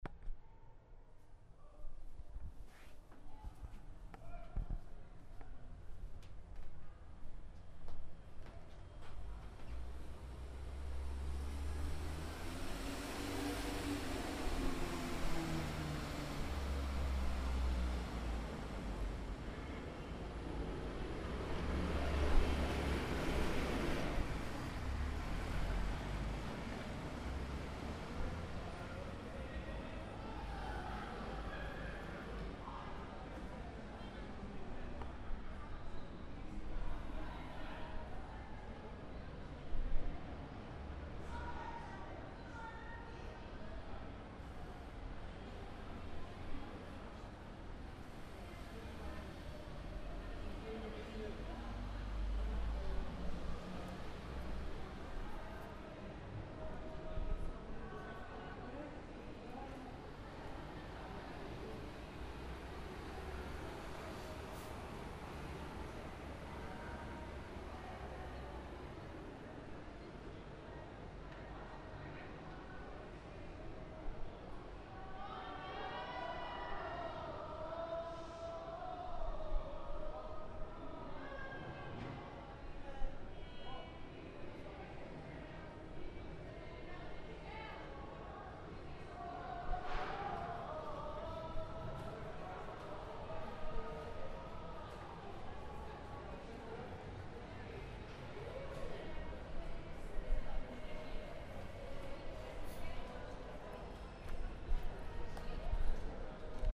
Nightlife in front of Cafè a Brasileira, 2am